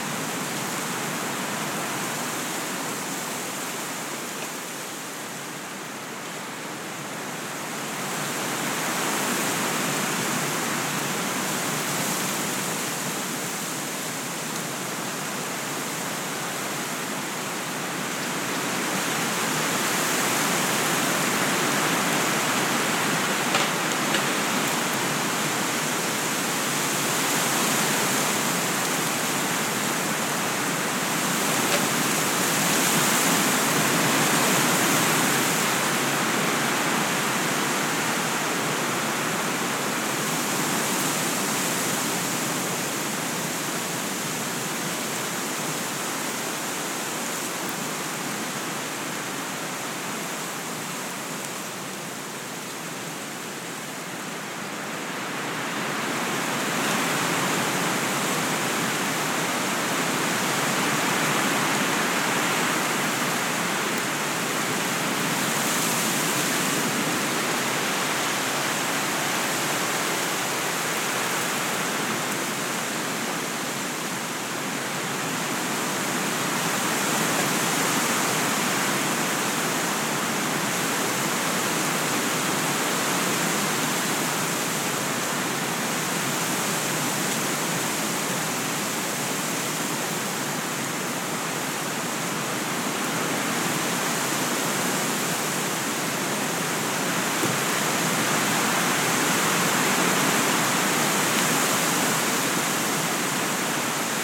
Urbanização Vila de Alva, Cantanhede, Portugal - Wind, Weeds and Trees
Sound of a windy afternoon in a field of weeds and trees.